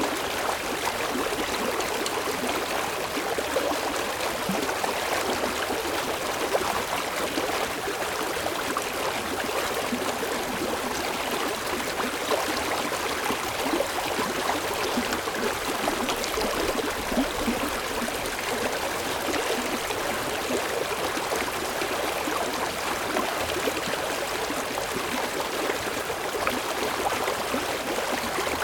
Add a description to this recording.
small runoff creek and the Bankhead ghost town